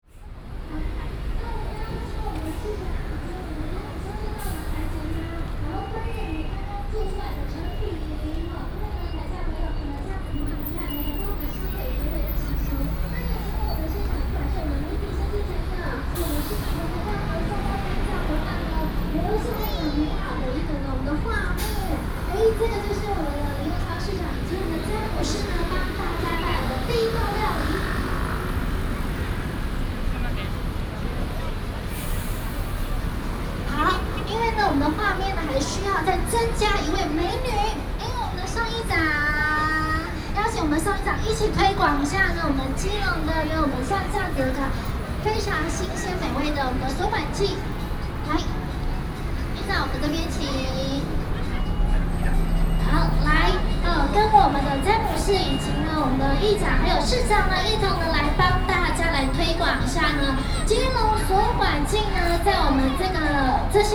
海洋廣場, Keelung City - In the Plaza
In the Plaza, Traffic Sound, Festivals
Ren’ai District, Keelung City, Taiwan